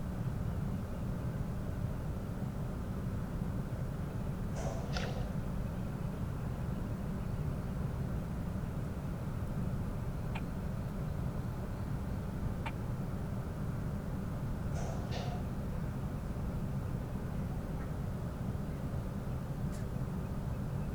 lemmer, vuurtorenweg: marina - the city, the country & me: marina berth
mechanical (hydraulic?) noise of a concrete factory (diagonally opposite) with echo
the city, the country & me: june 20, 2011
Lemmer, The Netherlands, 20 June